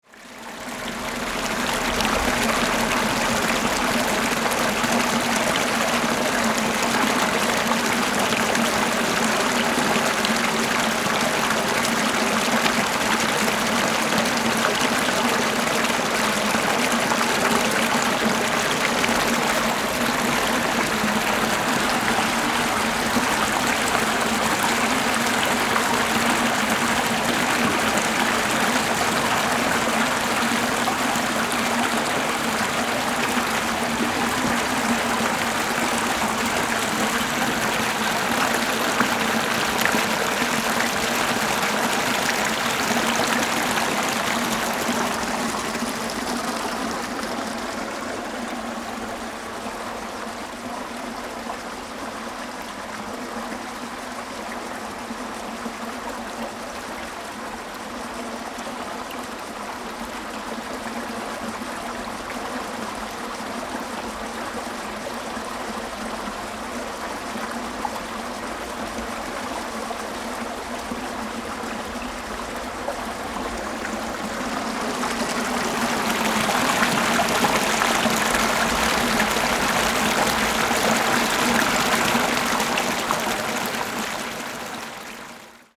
三芝區古庄里, New Taipei City - Irrigation waterway
Irrigation waterway
Zoom H4n+ Rode NT4